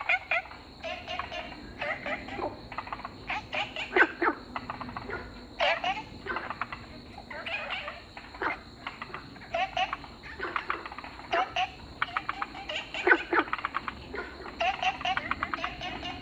Fuyang Eco Park, Taipei City, Taiwan - Frogs chirping
In the park, Frog sound, Ecological pool
Zoom H2n MS+XY